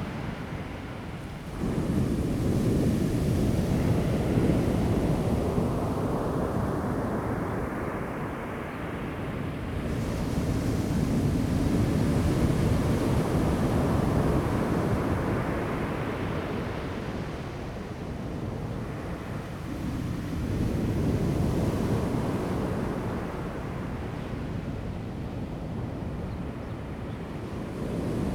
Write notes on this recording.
Sound of the waves, Zoom H2n MS+XY